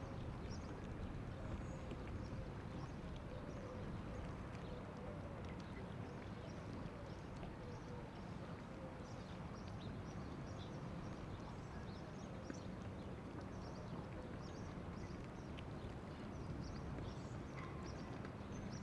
Avenue Michel Crépeau, La Rochelle, France - Bassin des Chalutiers La Rochelle 8 am
P@ysage Sonore La Rochelle . awakening of ducks at 4'23 . Bell 8 am at 9'27 .
4 x DPA 4022 dans 2 x CINELA COSI & rycote ORTF . Mix 2000 AETA . edirol R4pro
Nouvelle-Aquitaine, France métropolitaine, France, 28 April